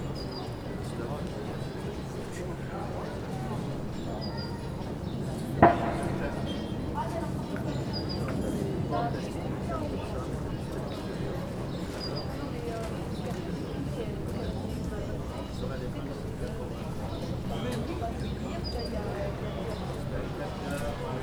Place Victor Hugo, Saint-Denis, France - Outside Cafe Le Khédive
This recording is one of a series of recording, mapping the changing soundscape around St Denis (Recorded with the on-board microphones of a Tascam DR-40).